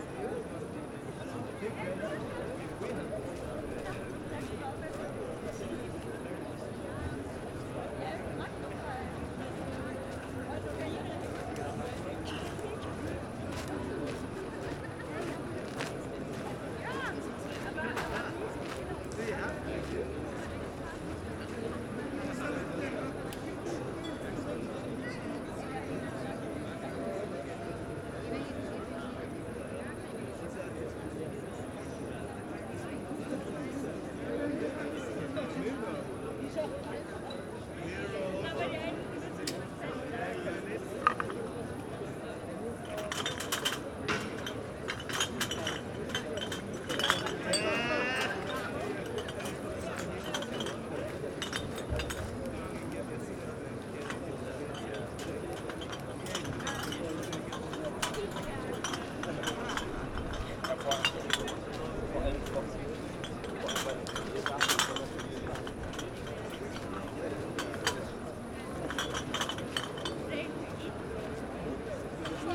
Recorded at 22:30 with a Zoom H5 on a hot Friday night. Many people in the park sitting in darkness (the park is not lit at all) and drinking.
Someone comes with a shopping trolly to collect bottles so they can claim the recycling refund.
The microphones were facing into the green area of the park on the other side of the thin, straight, gravel walkway.
Berlin, Germany, July 2018